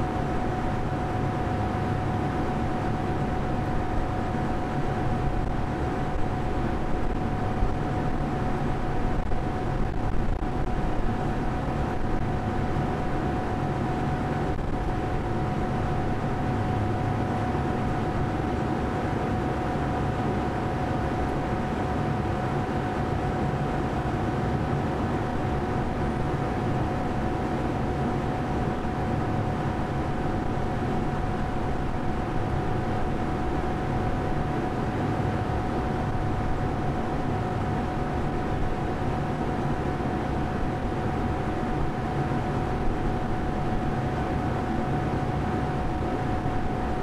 {
  "title": "ESAD, Caldas da Rainha, Portugal - 2º place",
  "date": "2014-02-28 14:30:00",
  "description": "place-roof\nrecording-H4N Handy Recorder\nsituation-sound pickup from the noise of the air conditioner\ntechniques-stereophonic pickup",
  "latitude": "39.40",
  "longitude": "-9.13",
  "timezone": "Europe/Lisbon"
}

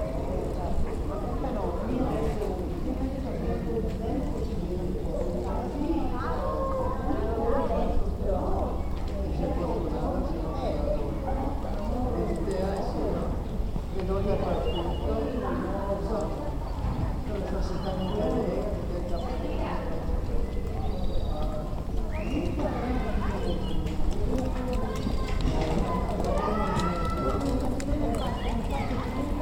{
  "title": "Murano Province of Venice, Italy - donne e bambini in piazza",
  "date": "2012-04-02 17:58:00",
  "description": "donne anziane e bambini in piazza, Murano, Venezia;",
  "latitude": "45.46",
  "longitude": "12.35",
  "altitude": "4",
  "timezone": "Europe/Rome"
}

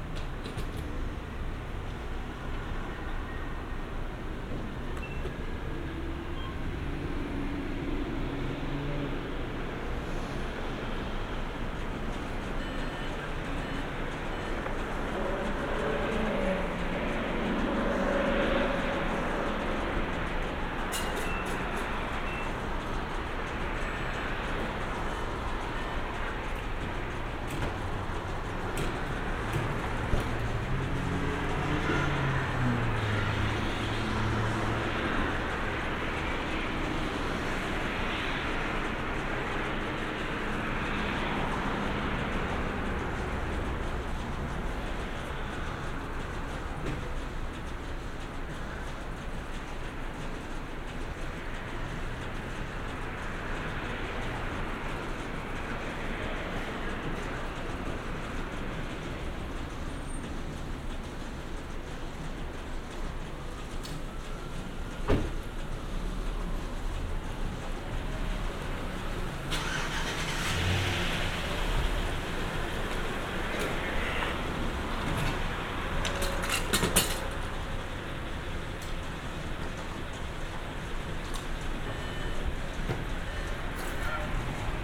{
  "title": "Paces Ferry Rd SE, Atlanta, GA, USA - A stop at the QT",
  "date": "2021-01-10 14:48:00",
  "description": "Getting a fill of gas at the QT. The process only takes a couple of minutes, but you can hear all the sounds one could expect at a gas station: lots of cars and the sound of the gas pumps.\n[Roland CS-10EM binaural earbuds & portable Sony dictation recorder with external inputs]",
  "latitude": "33.87",
  "longitude": "-84.47",
  "altitude": "297",
  "timezone": "America/New_York"
}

